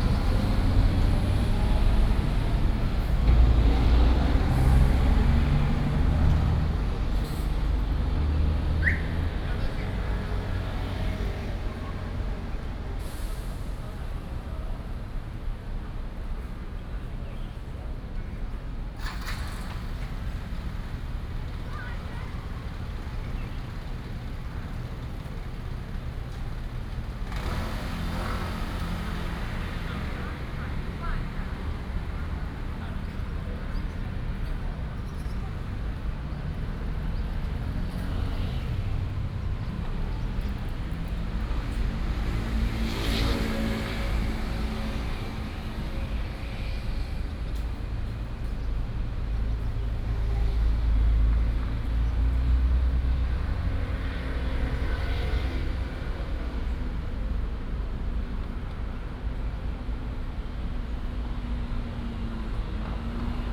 沙崙路, 新北市淡水區大庄里 - Sitting in the street
Sitting in the street, Traffic Sound
New Taipei City, Taiwan